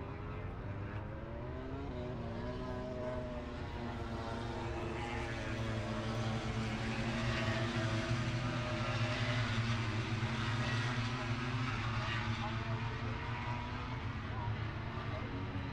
moto grand prix ... qualifying one ... Becketts corner ... open lavaliers clipped to chair seat ...